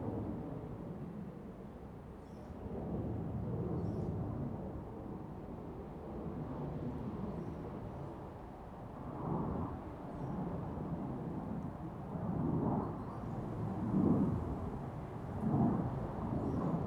At the beach, In the woods, Sound of the waves, Aircraft flying through
Zoom H2n MS+XY